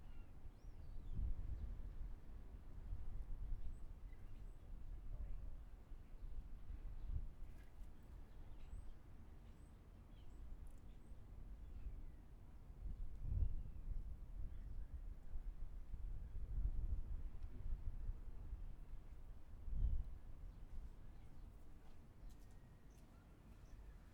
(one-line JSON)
{"title": "Seahouses breakwater, UK - Foghorn ... Seahouses ...", "date": "2017-09-26 13:40:00", "description": "Foghorn ... Seahouses ... air powered device ... open lavalier mics clipped on T bar fastened to mini tripod ...", "latitude": "55.58", "longitude": "-1.65", "timezone": "Europe/London"}